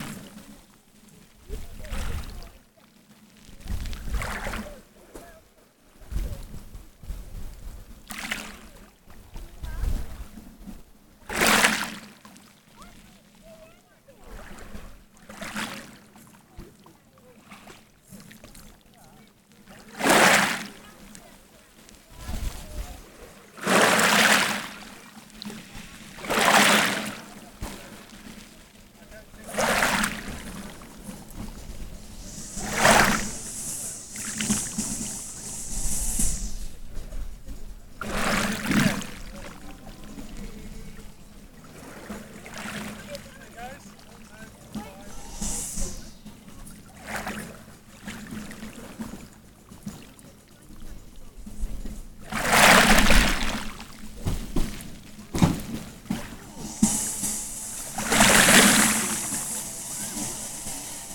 Newtons Cove Weymouth Dorset UK - Newtons Cove
Recorded on the pebbles facing the sea.
South West England, England, United Kingdom, 16 July 2020, 12:00